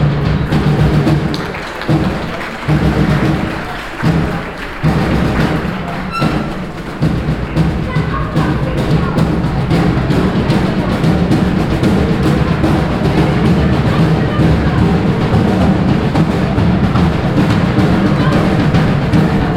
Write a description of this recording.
An extened recording of a drum corp performing on the castles terrace while other performer throw and juggle with flags accompanied by some audience reactions. Recorded during the annual medieval festival of the castle. Vianden, Schlossterrasse, Trommler, Eine längere Aufnahme von Trommlern, die auf der Schlossterrasse auftreten, während andere Künstler mit Flaggen jonglieren, einige Zuschauerreaktionen. Aufgenommen während des jährlichen Mittelalterfestes im Schloss. Vianden, terrasse du château, joueurs de tambours, Un long enregistrement d’un groupe de joueurs de tambour sur la terrasse du château tandis que d’autres artistes jonglent avec des drapeaux accompagnés par les réactions du public. Enregistré lors du festival médiéval annuel au château. Project - Klangraum Our - topographic field recordings, sound objects and social ambiences